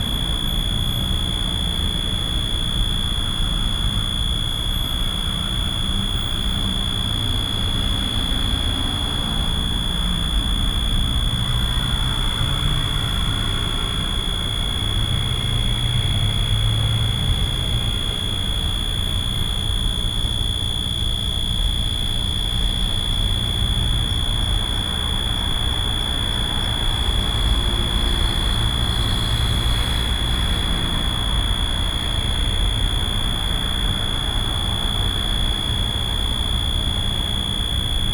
Washington DC, K St NW, Electric power transformer
USA, Virginia, Washington DC, Electric power transformer, Buzz, Road traffic, Binaural